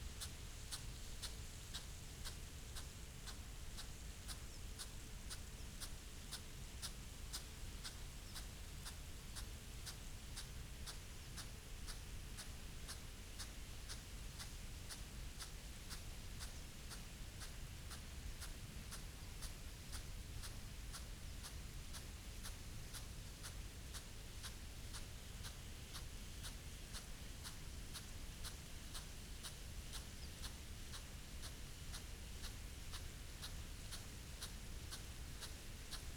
{"title": "Malton, UK - crop irrigation ...", "date": "2022-07-22 06:00:00", "description": "crop irrigation ... potatoes ... dpa 4060s clipped to bag to zoom h5 ... bird calls from ... yellow wagtail ... linnet ... wren ... pheasant ... wood pigeon ... sounds change as the spray hits cart track ...", "latitude": "54.13", "longitude": "-0.56", "altitude": "107", "timezone": "Europe/London"}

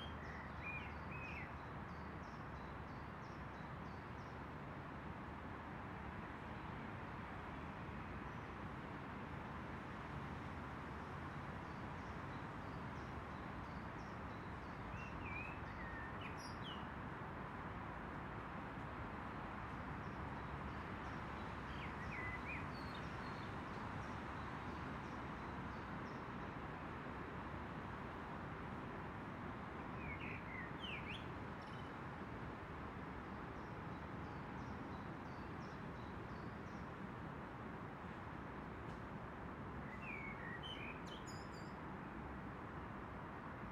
{"title": "Botanique, Rue Royale, Saint-Josse-ten-Noode, Belgium - Birds and traffic", "date": "2013-06-19 15:30:00", "description": "Sitting on a bench and listening intently, perceiving the thick, soupy quality of so much traffic encircling the park. Wondering at the way birdsong can rise above the sound of car engines, and enjoying some blackbirds and wood pigeons busy in the trees. Audio Technica BP4029 and FOSTEX FR-2LE.", "latitude": "50.85", "longitude": "4.36", "altitude": "43", "timezone": "Europe/Brussels"}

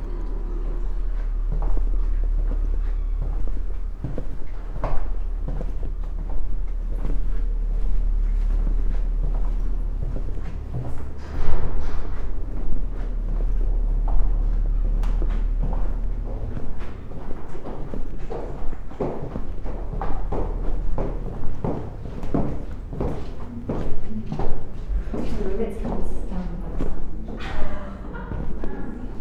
{"title": "Audio Walk in Worcester City Centre, UK - Audio Walk in Worcester City Centre", "date": "2022-06-20 11:32:00", "description": "A long post Covid walk around the centre of Worcester starting in a shopping precinct then out onto the streets, in and out of the cathedral, back along High Street and outside a cafe for lunch. We hear snatches of conversation and a street musician on a sunny day. The audio image changes constantly as I slowly wander around between pauses. All the recording equipment, a MixPre 6 II with 2 Sennheiser MKH 8020s, is carried in a small rucksack.", "latitude": "52.19", "longitude": "-2.22", "altitude": "31", "timezone": "Europe/London"}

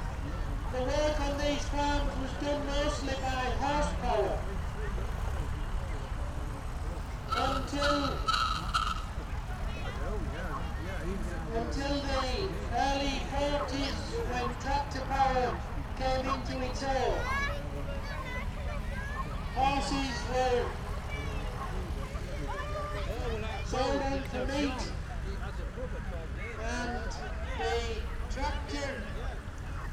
{"title": "Red Way, York, UK - Farndale Show ... vintage tractor display ...", "date": "2018-08-27 13:00:00", "description": "Farndale Show ... vintage tractor display ... lavalier mics clipped to baseball cap ... all sorts of everything ...", "latitude": "54.37", "longitude": "-0.97", "altitude": "151", "timezone": "GMT+1"}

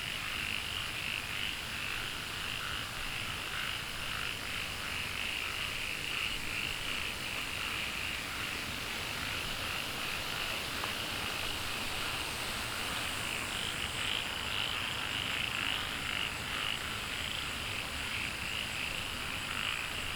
{"title": "茅埔坑溪, 南投縣埔里鎮桃米里 - Walking along the stream", "date": "2015-08-10 19:53:00", "description": "Walking along the stream, The sound of water streams, Frogs chirping", "latitude": "23.94", "longitude": "120.94", "altitude": "470", "timezone": "Asia/Taipei"}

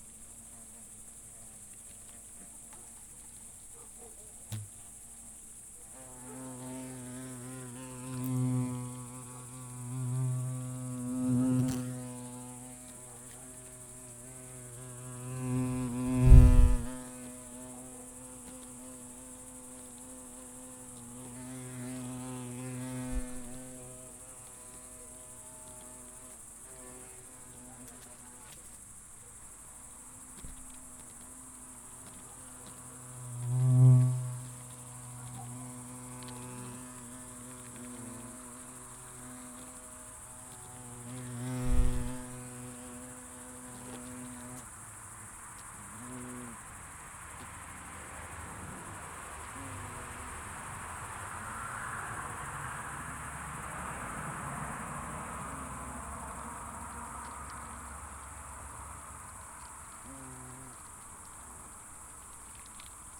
{"title": "wasp nest activity at night, South Estonia", "date": "2011-07-25 01:15:00", "description": "mics close to wasps building a nest", "latitude": "58.21", "longitude": "27.07", "altitude": "47", "timezone": "Europe/Tallinn"}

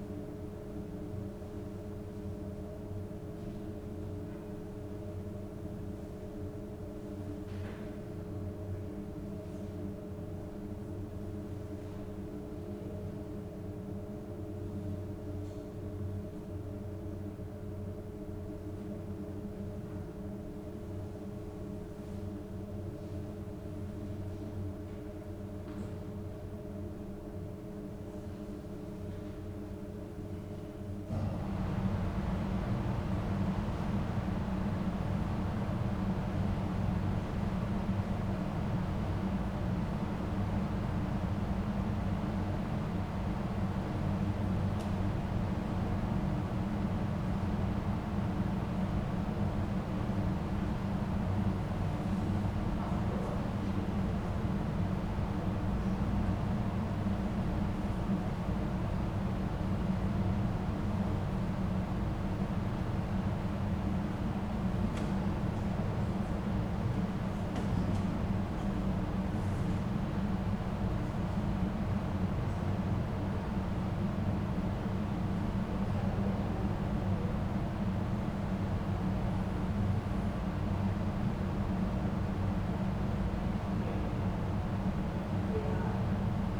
{"title": "burg/wupper, müngstener straße: kirche zur heiligen dreieinigkeit - the city, the country & me: holy trinity church", "date": "2011-10-15 13:10:00", "description": "heating system of the protestant church, presbyter tidying up the church\nthe city, the country & me: october 15, 2011", "latitude": "51.14", "longitude": "7.14", "altitude": "98", "timezone": "Europe/Berlin"}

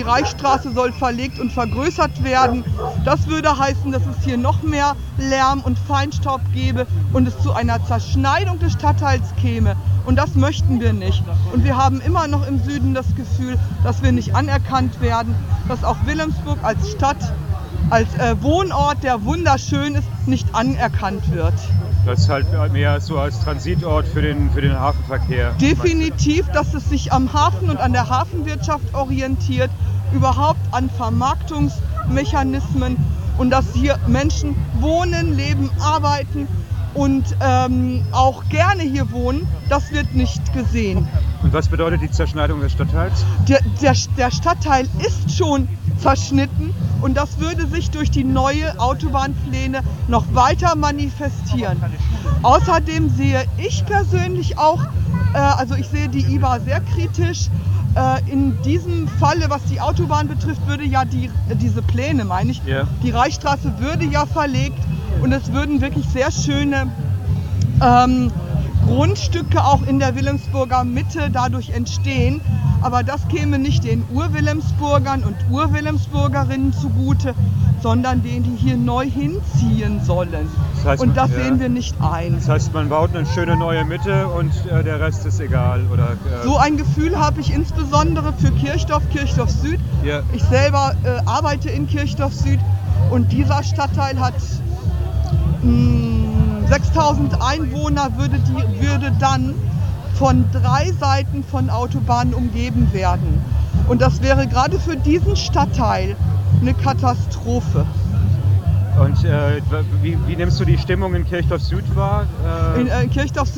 {"title": "Demonstration gegen den Neubau einer Autobahn in Wilhelmsburg 31.10.2009", "date": "2009-11-01 15:31:00", "description": "Warum der Neubau Wilhelmsburg zerschneidet. Die Autobahn als soziale Frage.", "latitude": "53.50", "longitude": "10.00", "altitude": "2", "timezone": "Europe/Berlin"}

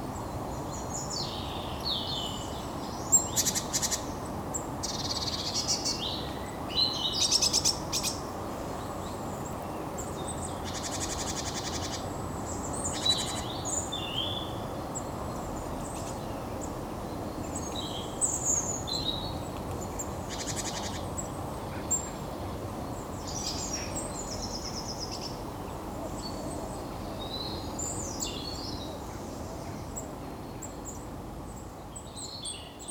{"title": "Maintenon, France - Great tits", "date": "2016-12-26 08:30:00", "description": "Great tits and blue tits singing early in the morning, in a quiet village.", "latitude": "48.59", "longitude": "1.61", "altitude": "137", "timezone": "GMT+1"}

